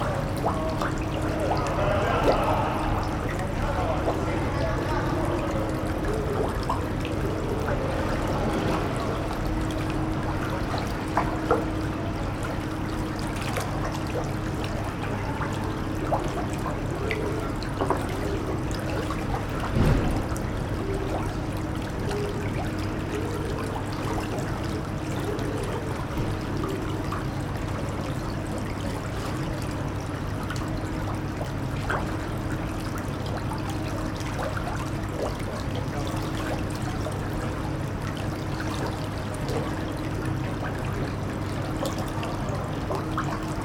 The Holy Brook behind the Library in Reading on cloudy Tuesday afternoon. Sony M10 Rode Videomic ProX